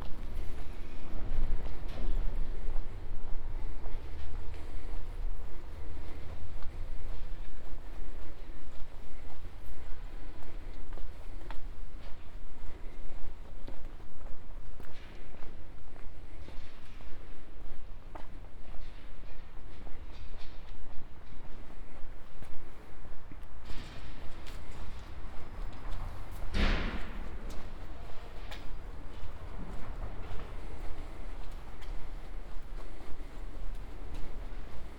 Ascolto il tuo cuore, città. I listen to your heart, city. Several chapters **SCROLL DOWN FOR ALL RECORDINGS** - Saturday market and plastic waste in the time of COVID19, Soundwalk
"Saturday market and plastic waste in the time of COVID19", Soundwalk
Chapter XXXIII of Ascolto il tuo cuore, città. I listen to your heart, city
Saturday April 4th 2020. Shopping in open market of Piazza Madama Cristina, including discard of plastic waste, twenty five days after emergency disposition due to the epidemic of COVID19.
Start at 3:52 p.m. end at 4:21 p.m. duration of recording 29'09''
The entire path is associated with a synchronized GPS track recorded in the (kml, gpx, kmz) files downloadable here:
Piemonte, Italia, 4 April 2020